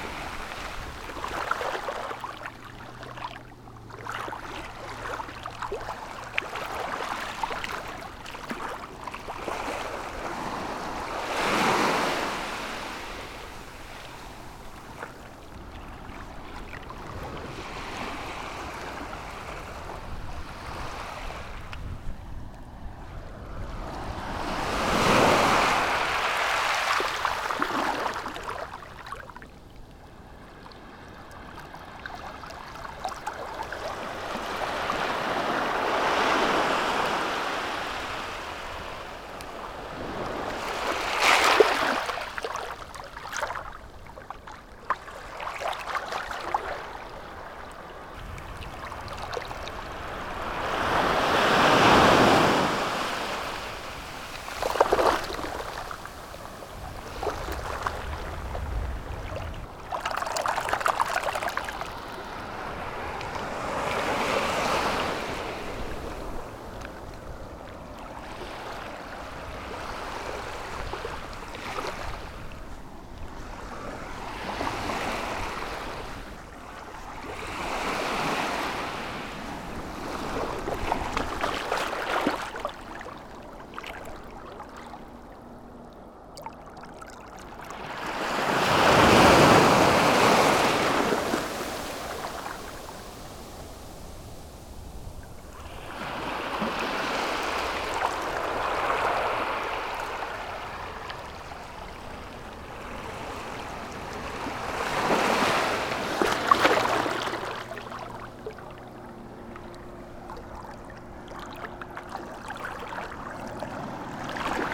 La Tranche-sur-Mer, France - The sea
Recording of the sea at La-Tranche-Sur-Mer beach, walking during 2,5 kilometers going east. As the beach is a curve, there's variation. It's low tide, the sea is very quiet. Some children are playing in the water. Also, there's very very much wind, as often at the sea. Recording is altered but I think it's important as the sea feeling is also the iodized wind.